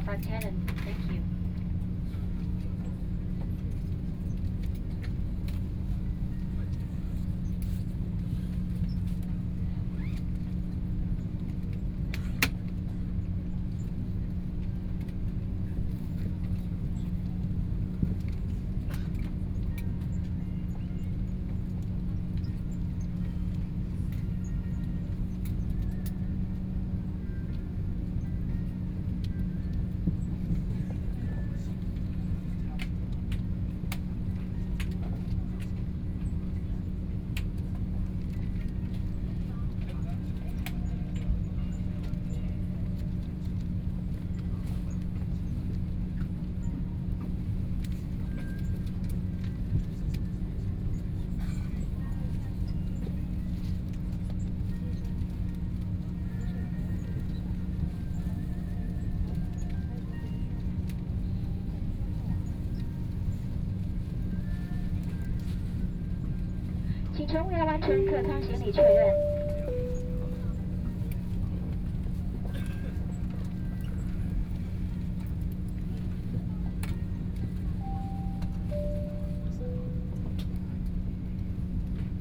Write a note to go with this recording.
Inside the plane, Aircraft interior voice broadcast message, Binaural recording, Zoom H6+ Soundman OKM II